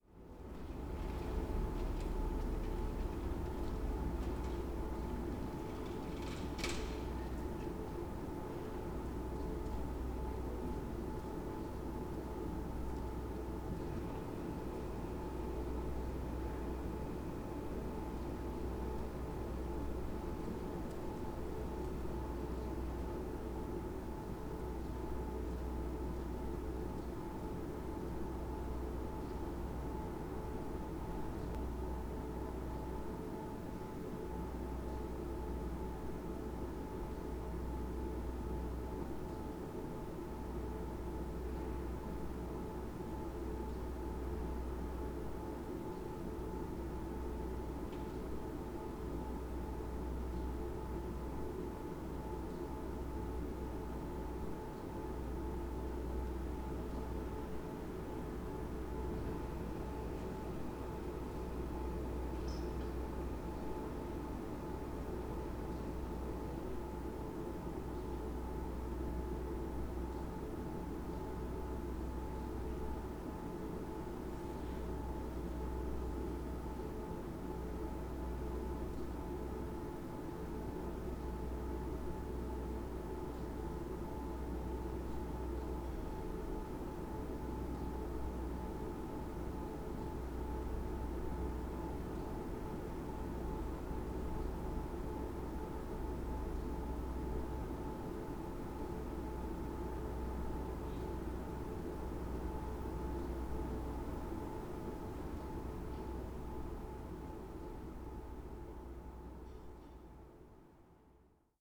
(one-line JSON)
{"title": "berlin, friedelstraße: stromkasten - the city, the country & me: electrical pillar box", "date": "2010-08-12 03:11:00", "description": "the city, the country & me: august 12, 2010", "latitude": "52.49", "longitude": "13.43", "altitude": "45", "timezone": "Europe/Berlin"}